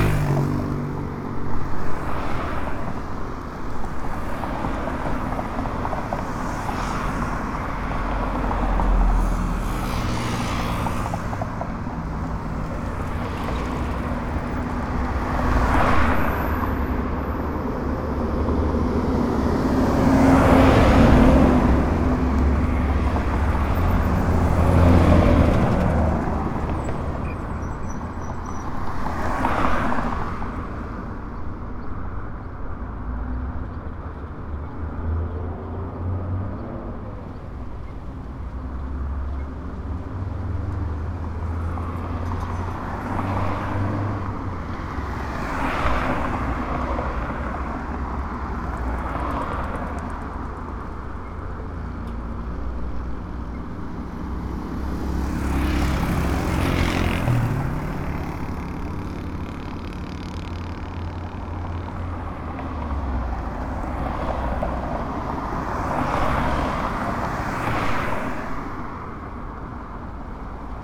Traffic on Las Torres avenue during COVID-19 in phase 2 in León, Guanajuato. Mexico. Outside the Suzuki car agency.
This is a busy avenue. Although there are several vehicles passing in this quarantine, the difference in vehicle flow is very noticeable.
(I stopped to record this while I was going to buy my mouth covers.)
I made this recording on April 14th, 2020, at 5:42 p.m.
I used a Tascam DR-05X with its built-in microphones and a Tascam WS-11 windshield.
Original Recording:
Type: Stereo
Esta es una avenida con mucho tráfico. Aunque sí hay varios vehículos pasando en esta cuarentena, sí se nota mucho la diferencia de flujo vehicular.
(Me detuve a grabar esto mientras iba a comprar mis cubrebocas.)
Esta grabación la hice el 14 de abril 2020 a las 17:42 horas.
Guanajuato, México, April 2020